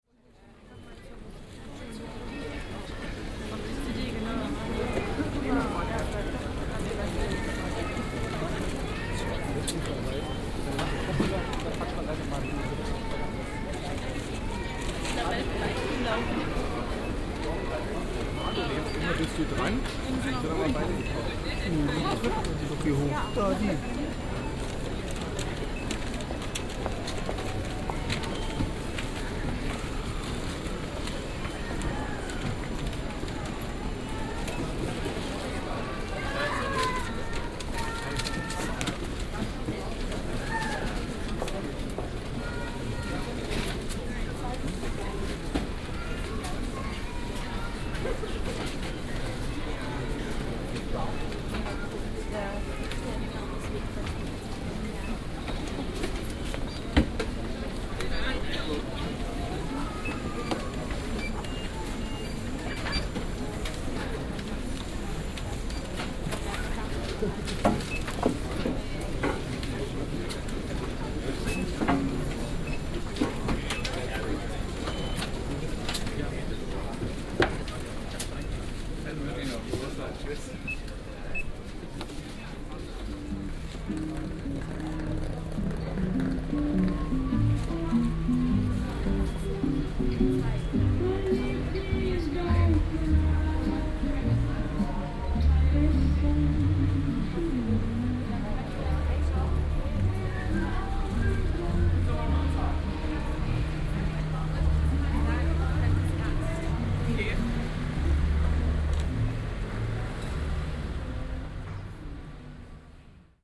{
  "title": "Berlin, Friedrichstr., bookstore - bookstore christmas crowd",
  "date": "2007-12-21 19:16:00",
  "description": "inside bookstore ambience, weekend before christmas\nMenschen an der Kasse, piepsende Scanner, schreiende Kinder, Musik beim hinausgehen. Wochenende vor Weihnachten",
  "latitude": "52.52",
  "longitude": "13.39",
  "altitude": "49",
  "timezone": "Europe/Berlin"
}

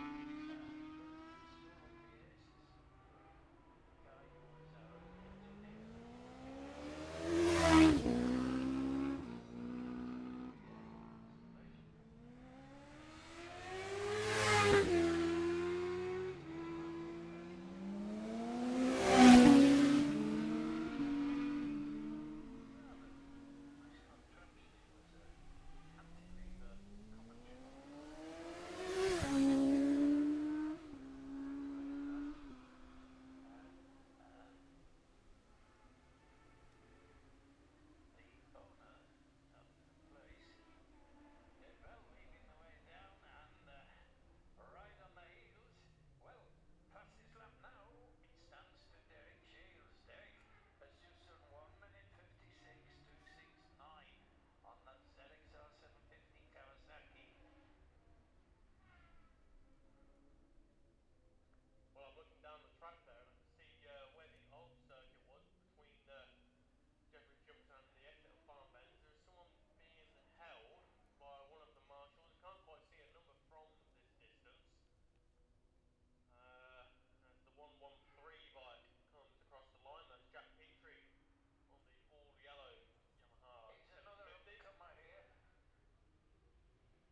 {
  "title": "Jacksons Ln, Scarborough, UK - gold cup 2022 ... classic s'bikes practice ...",
  "date": "2022-09-16 11:48:00",
  "description": "the steve henshaw gold cup ... classic superbikes practice ... dpa 4060s on t'bar on tripod to zoom f6 ...",
  "latitude": "54.27",
  "longitude": "-0.41",
  "altitude": "144",
  "timezone": "Europe/London"
}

